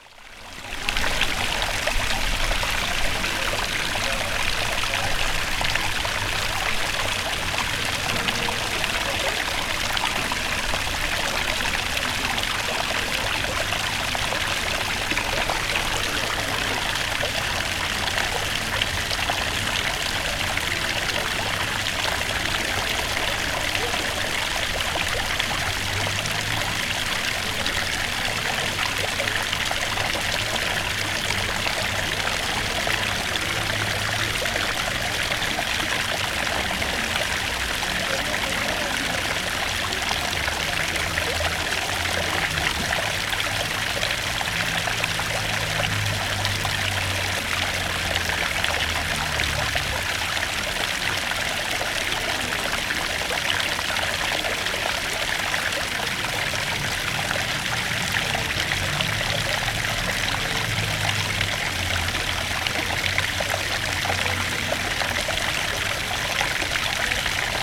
La mondialement célèbre fontaine des éléphants de Chambéry en l'honneur du conte De Boigne .
6 October 2022, 16:30